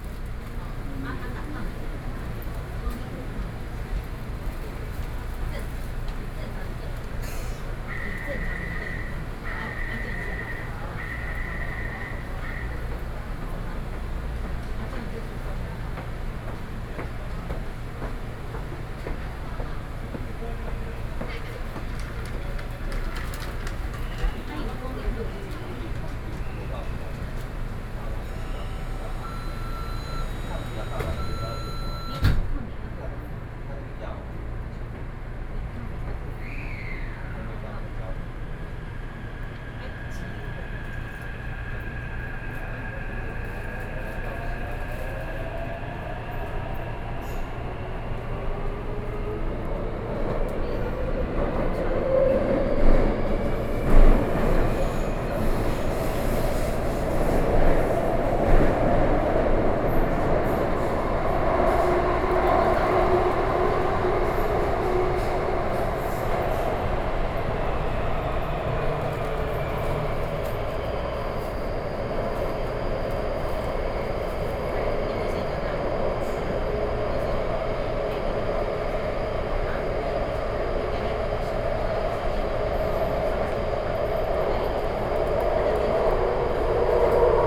Orange Line (Taipei Metro), Taipei City - inside the Trains
Crossing the line noise sound great, from Zhongxiao Xinsheng Station to Guting Station, Sony PCM D50 + Soundman OKM II